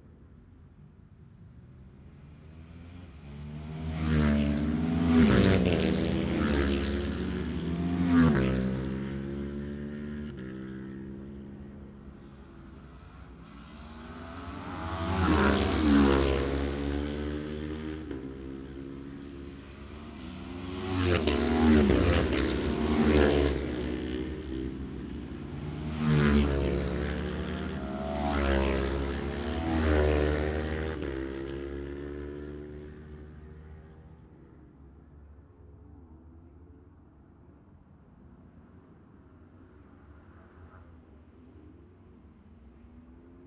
Jacksons Ln, Scarborough, UK - Gold Cup 2020 ...
Gold Cup 2020 ... Twins qualifying ... Memorial Out... dpa 4060s to Zoom H5 ...
11 September 2020